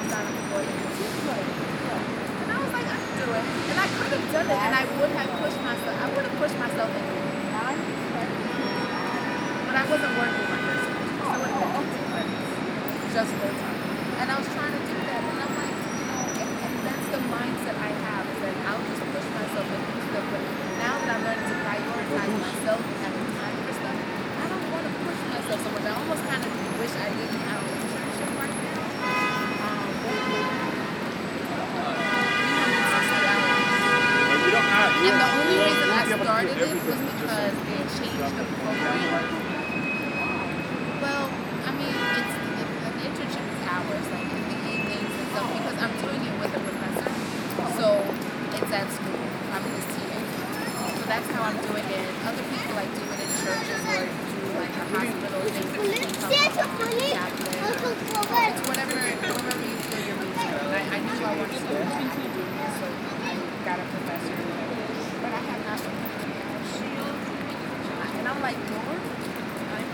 New York, City Hall Park, endless conversation.
NY, USA, September 2010